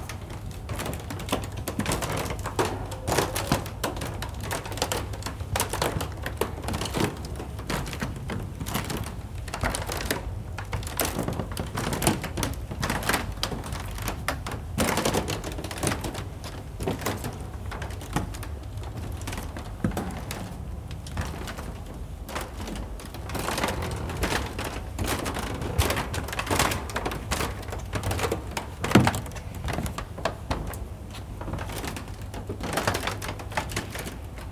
Nördliche Innenstadt, Potsdam, Germany - Nightingale floor - a pressure of creaks, squeaks and groans
Walking over the spectacularly creaky floor of the disused library/sports hall in ZeM (Brandenburgisches Zentrum für Medienwissenschaften - Brandenburg Media College). This impressive building is a legacy from the DDR, now used as a college but scheduled for demolition in the future. This recording was made walking over the wooden floor in the near dark trying to avoid empty shelves and somewhat precarious piles of discarded items stacked there. The idea of the 'nightingale floor' comes from Japan, where such a creaky floor was used to forewarn of approaching people and guard against attacks by stealthy assassins.
24 October 2016